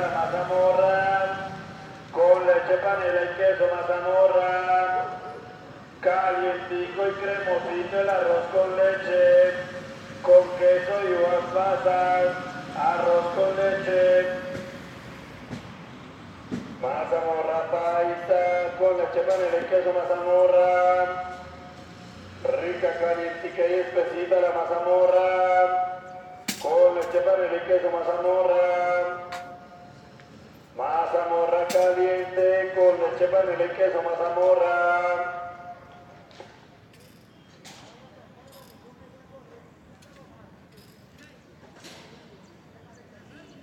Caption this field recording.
The soundscape was recorded in the town of suba at 10 am, in a residential area, it is a place with little traffic, but nevertheless you can hear people, a dog, cars and the man who passes by selling his product.